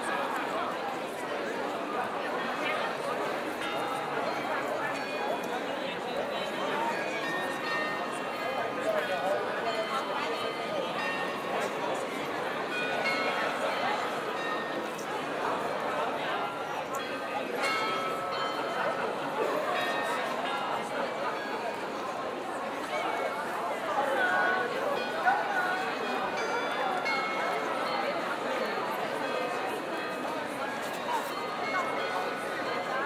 Aarau, Maienzug, Bells, Schweiz - Maienzug Glockenspiel
While the people are chatting, waiting for the Maienzug, the bells of a former tower of the city play some tunes.
Aarau, Switzerland, 1 July 2016